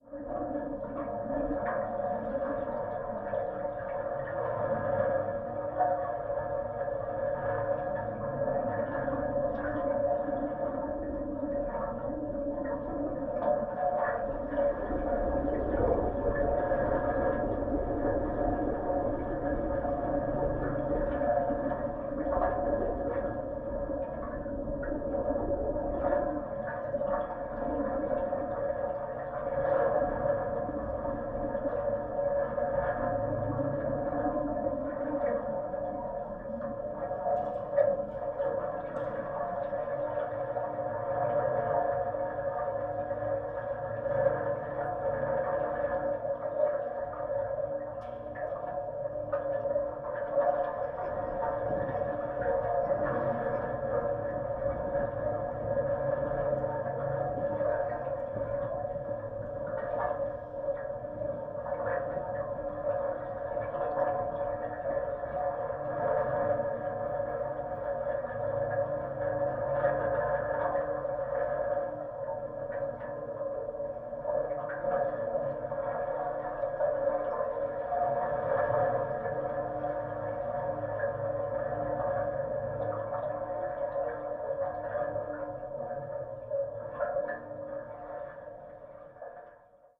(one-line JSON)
{"title": "Friedelstr., Neukölln, Berlin - wastewater flow in tube", "date": "2014-08-24 13:55:00", "description": "Friedelstr. Berlin, ongoing construction site, iron wastewater tubes temporarily moved over ground, flow recorded with DIY contact mics.", "latitude": "52.49", "longitude": "13.43", "altitude": "43", "timezone": "Europe/Berlin"}